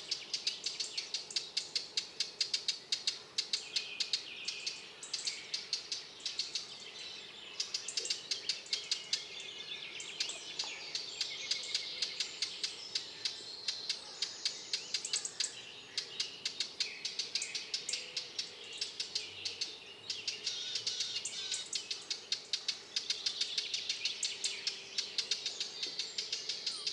Lac de la Liez - Dawn chorus
Dawn chorus recorded after a night in my tent, on the border of the lake.
Lecey, France, 12 July 2014, 05:00